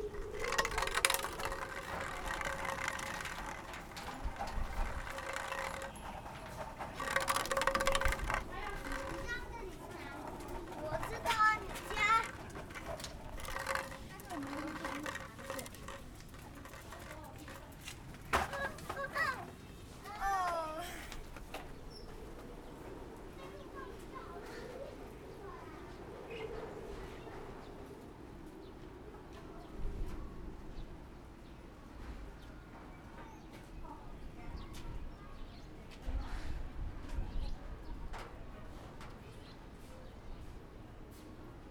芳苑村, Fangyuan Township - The sound of the wind
The sound of the wind, On the streets of a small village
Zoom H6 MS
9 March 2014, ~09:00